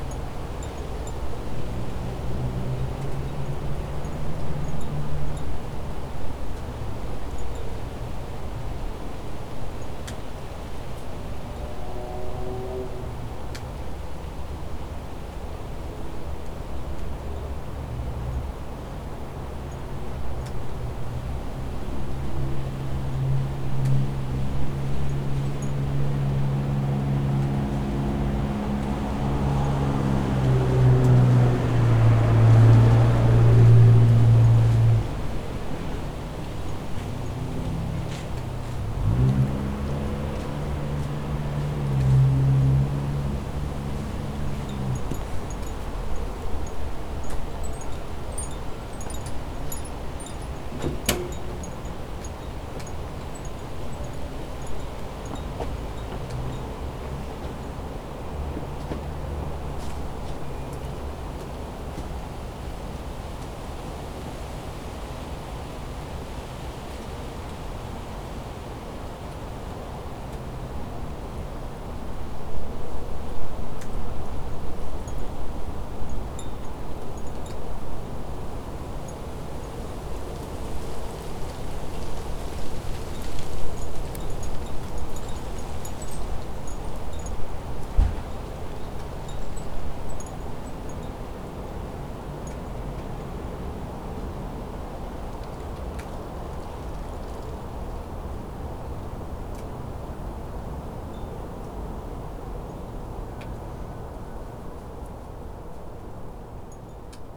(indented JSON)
{
  "title": "Suffex Green Lane, GA - Windy Fall Day",
  "date": "2019-11-27 16:11:00",
  "description": "A recording of a beautiful fall day. This was taken from a porch with a Tascam DR-22WL and a windmuff. You can hear leaves, vehicles, people talking by the side of the road, wind chimes, and a few other sounds as well.",
  "latitude": "33.85",
  "longitude": "-84.48",
  "altitude": "296",
  "timezone": "America/New_York"
}